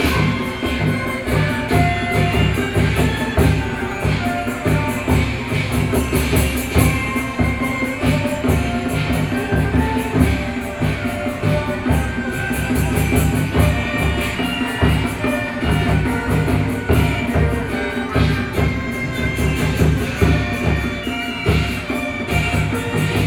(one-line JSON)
{"title": "Sanzhong District, New Taipei City - Traditional temple festivals", "date": "2012-11-04 09:53:00", "latitude": "25.08", "longitude": "121.48", "altitude": "7", "timezone": "Asia/Taipei"}